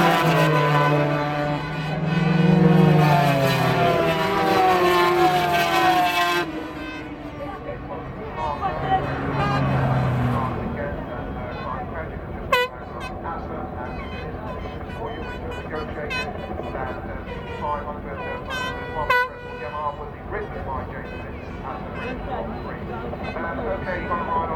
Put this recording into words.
500cc motorcycle race ... part one ... Starkeys ... Donington Park ... the race and all associated noise ... Sony ECM 959 one point stereo mic to Sony Minidisk ...